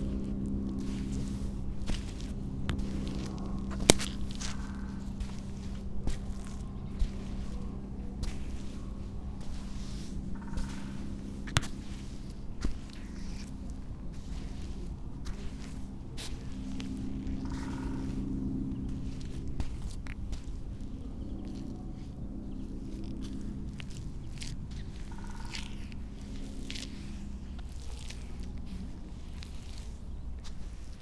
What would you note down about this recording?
spring, woodpecker, airplane ...